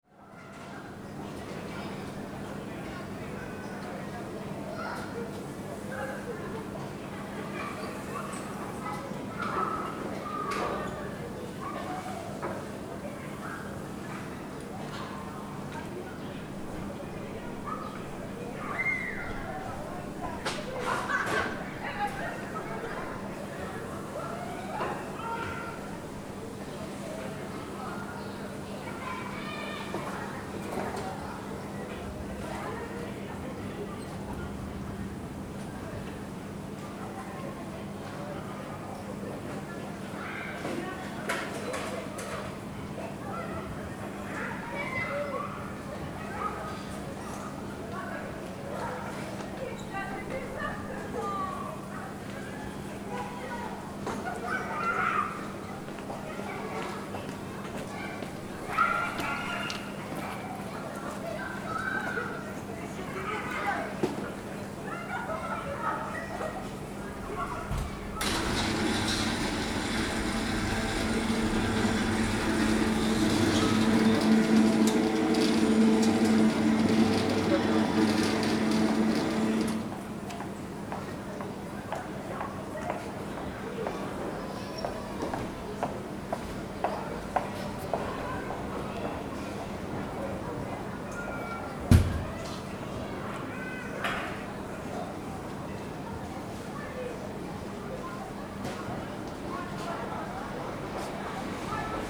Rue du Four Becard, Saint-Denis, France - Rue du Four Becard
This recording is one of a series of recording, mapping the changing soundscape around St Denis (Recorded with the on-board microphones of a Tascam DR-40).
25 May 2019